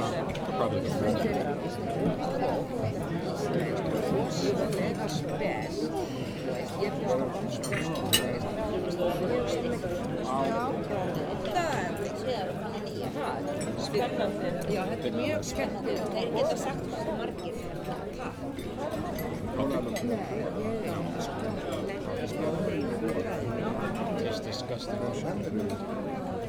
neoscenes: reception after funeral
reception at Hotel Saga after Helga's funeral, Rekjavik, Iceland, 03.July.2008